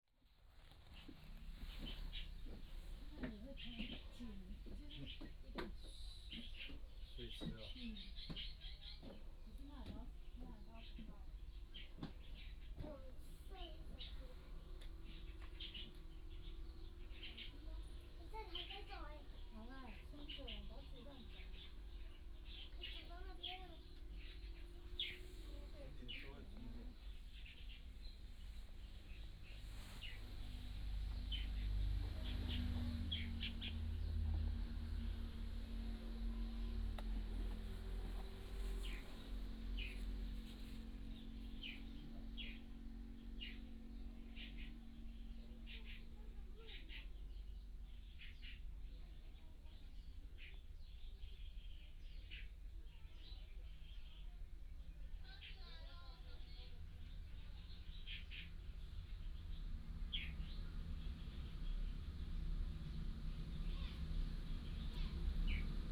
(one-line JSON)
{"title": "杉福村, Hsiao Liouciou Island - Birds singing", "date": "2014-11-01 11:07:00", "description": "Birds singing, Inside the rock cave", "latitude": "22.35", "longitude": "120.37", "altitude": "38", "timezone": "Asia/Taipei"}